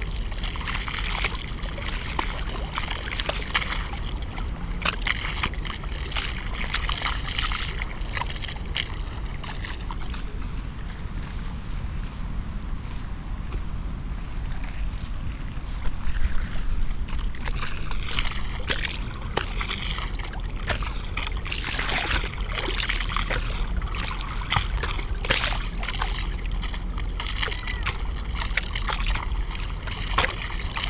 willow tree, Treptower park, Berlin, Germany - river Spree, lapping waves, after a ship passes-by
Sonopoetic paths Berlin
6 September 2015